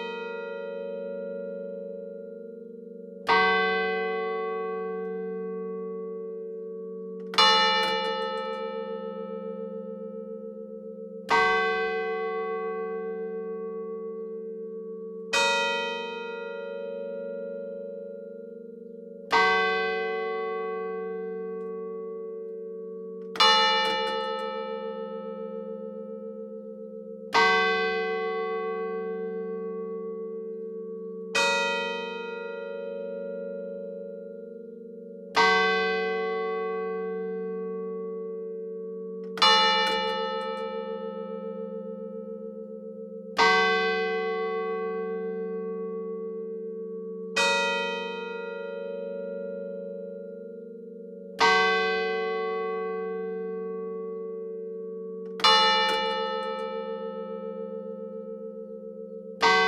Rue du Temple, La Ferté-Vidame, France - La Ferté Vidam - Église St-Nicolas
La Ferté Vidam (Eure-et-Loir)
Église St-Nicolas
le Glas